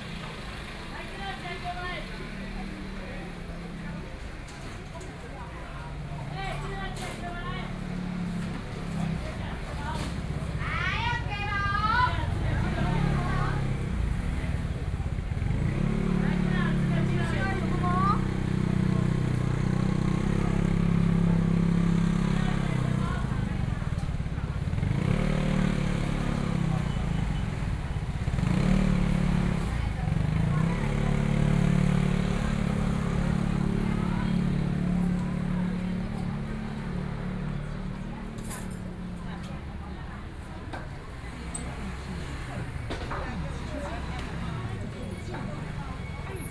Qingshui St., Tamsui Dist. - Cries the market
Selling chicken sounds, Standing in front of convenience stores, The traffic sounds, Binaural recordings, Zoom H6+ Soundman OKM II
New Taipei City, Taiwan